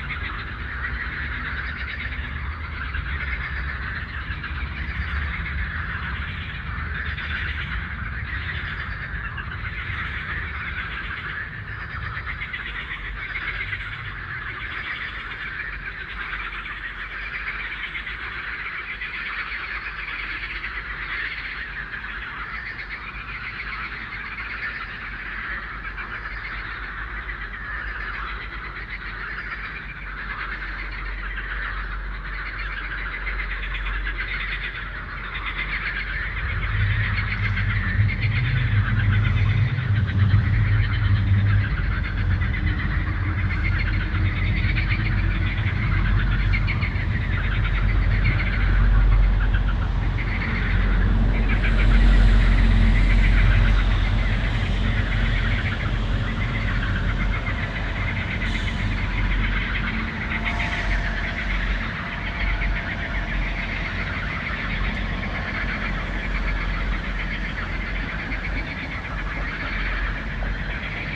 Moeras van Wiels, Luttrebruglaan, Vorst, Belgium - Frog chorus at night
Woodland park with some beautiful and very tall beech trees.